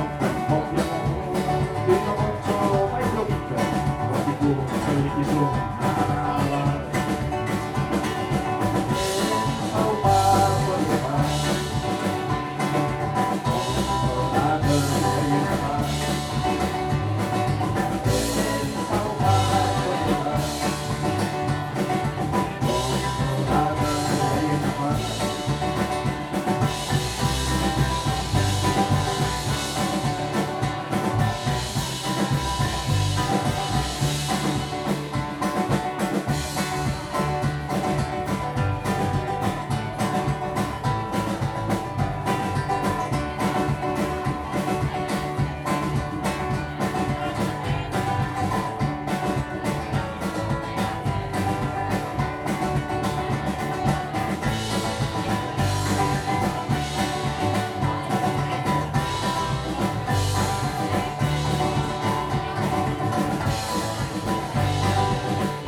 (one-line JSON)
{"title": "Via Maestra, Rorà TO, Italia - Stone Oven House August 29/30 2020 artistic event 1 of 3", "date": "2020-08-29 21:00:00", "description": "Music and contemporary arts at Stone Oven House, Rorà, Italy; event 1 of 3\nOne little show. Two big artists: Alessandro Sciaraffa and Daniele Galliano. 29 August.\nSet 1 of 3: Saturday, August 30th, h.9:00 p.m.", "latitude": "44.79", "longitude": "7.20", "altitude": "893", "timezone": "Europe/Rome"}